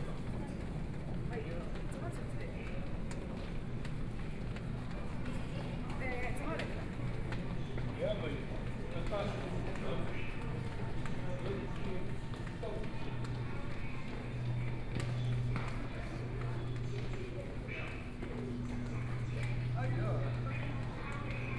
Jiaotong University Station, Shanghai - walking in the station
walking in the station, Binaural recording, Zoom H6+ Soundman OKM II